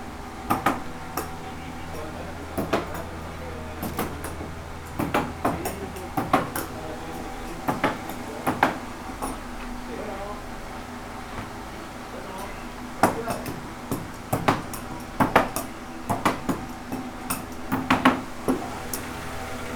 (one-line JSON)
{"title": "Peñitas, Jardines del Moral, León, Gto., Mexico - Tenería Clavando", "date": "2019-08-08 10:37:00", "description": "A tannery in which the old-style skins are tanned.\nA person is heard nailing the skin to a board to immediately put it to dry next to fans that are also heard.\nI made this recording on August 8, 2019, at 10:37 a.m.\nI used a Tascam DR-05X with its built-in microphones and a Tascam WS-11 windshield.\nOriginal Recording:\nType: Stereo\nUna tenería en la que se curten las pieles al estilo antiguo.\nSe escucha a una persona clavando la piel a una tabla para enseguida ponerla a secar junto a ventiladores que también se escuchan.\nEsta grabación la hice el 8 de agosto 2019 a las 10:37 horas.", "latitude": "21.15", "longitude": "-101.69", "altitude": "1818", "timezone": "America/Mexico_City"}